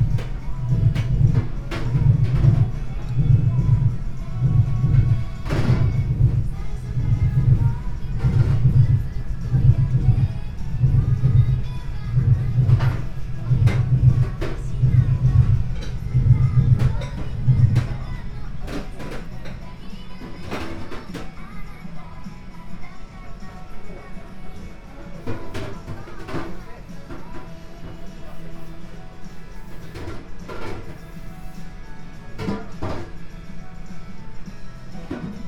under JR rail tracks, shibuya, tokyo - tiny noodle soup restaurant
radio, spoken words, alternating with strong roar, no other customers at the moment, kitchen sounds - big pots, boiling waters and noodles ...
Tokyo, Japan, 18 November 2013